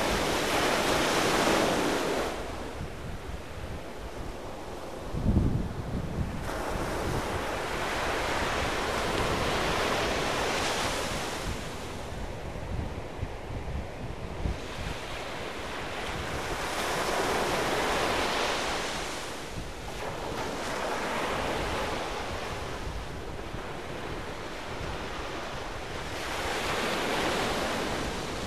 {"title": "20091021 Cijin Beach", "description": "Cijin Beach, KaoShiung.", "latitude": "22.61", "longitude": "120.26", "altitude": "37", "timezone": "Asia/Taipei"}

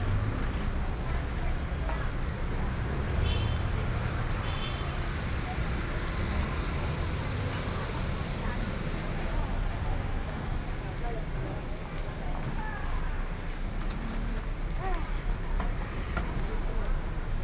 {"title": "Neihu Rd., Taipei City - walking on the Road", "date": "2014-04-12 19:37:00", "description": "walking on the Road, Traffic Sound, Very many people traveling to the park direction\nPlease turn up the volume a little. Binaural recordings, Sony PCM D100+ Soundman OKM II", "latitude": "25.08", "longitude": "121.58", "altitude": "14", "timezone": "Asia/Taipei"}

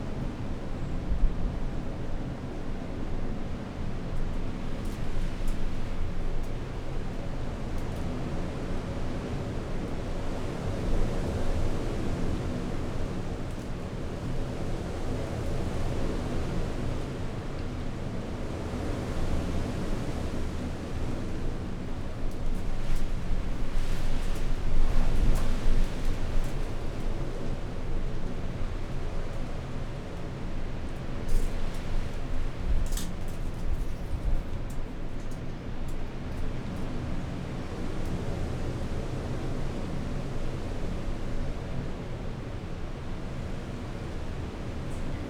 {"title": "Unnamed Road, Malton, UK - inside church porch ... outside storm erik ...", "date": "2019-02-09 07:50:00", "description": "inside church porch ... outside ... on the outskirts of storm erik ... open lavaliers on T bar on tripod ...", "latitude": "54.12", "longitude": "-0.54", "altitude": "84", "timezone": "Europe/London"}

